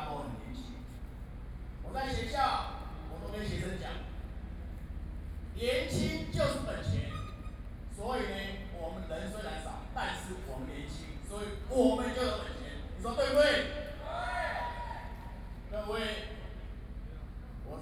Legislative Yuan, Taiwan - Speech
Different professionals are speeches against nuclear power, Zoom H4n+ Soundman OKM II
台北市 (Taipei City), 中華民國